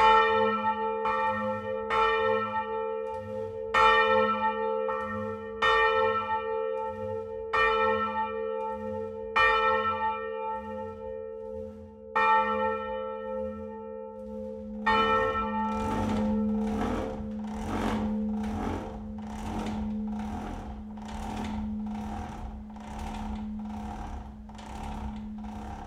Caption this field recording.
Maletable (Orne), Église Notre Dame de la Salette, Le mécanisme + la Volée